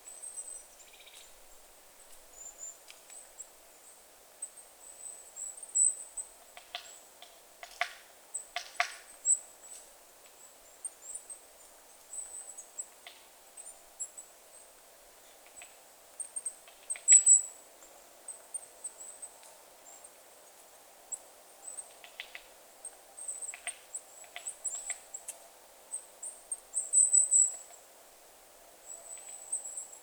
Lithuania, Utena, birdies in winter
little birdies picking food in wintery wood
15 January 2012, 13:30